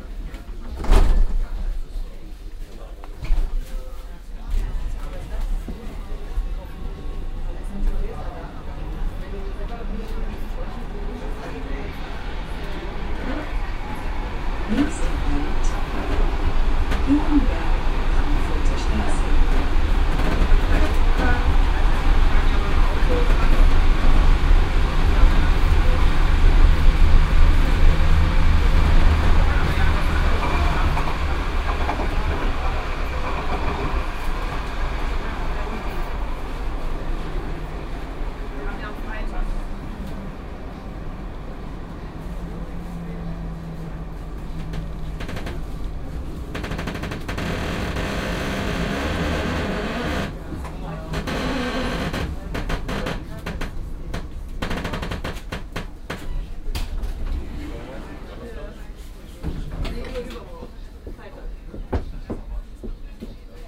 cologne, strassenbahnfahrt, nächster halt, frankfurt
soundmap:köln/ nrw
fahrt mit der strassenbahn, hier temporär u-bahn, linie 1, abends, nächster halt höhenberg, frankfurter strasse - hier wieder über tage
project: social ambiences/ listen to the people - in & outdoor nearfield